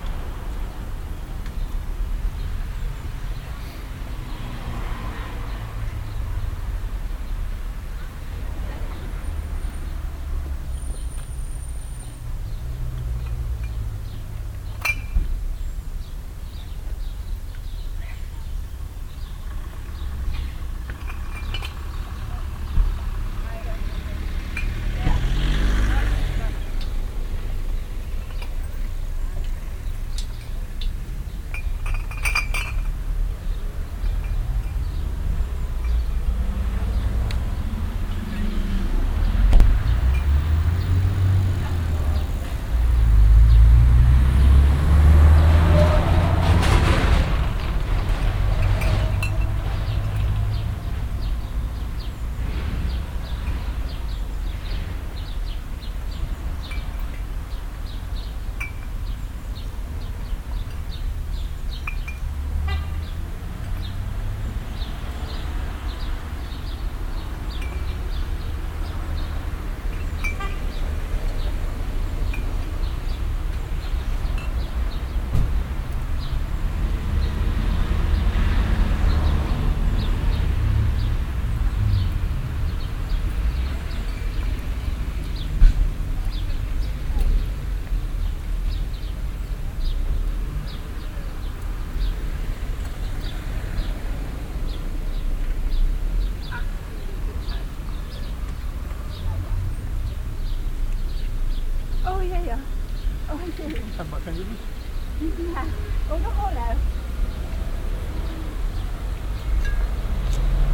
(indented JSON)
{
  "title": "refrath, siebenmorgen, altglascontainer",
  "description": "soundmap refrath/ nrw\nungeleerte altglascontainer an der strasse, mittags, passantenbeschwerden und neue flaschen\nproject: social ambiences/ listen to the people - in & outdoor nearfield recordings",
  "latitude": "50.96",
  "longitude": "7.11",
  "altitude": "75",
  "timezone": "GMT+1"
}